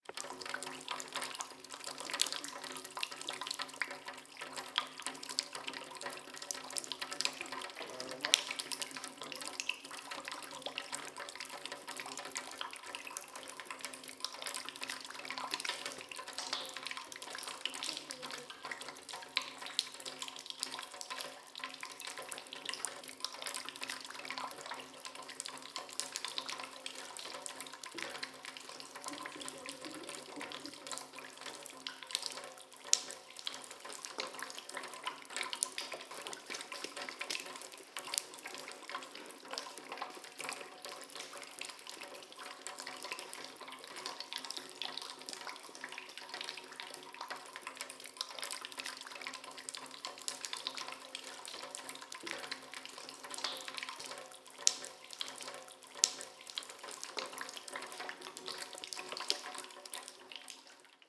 Mériel, France - Water Flowing on a metal collector in the Abandoned Quarry
Il y a une source dans les carrières abandonnées d'Hennocque.
Une plaque de tôle a été placé de façon à récupérer l'eau et à l'amener dans un tonneau.Le tout est recouvert de calcaire depuis des décennies.
Some of the tunnels of the abandonned Quarry Hennocque are flooded.
Here the water felt on a kind of gutter that go into a drum
which is completely calcified, to collect it.
/zoom h4n intern xy mic
5 January, ~10:00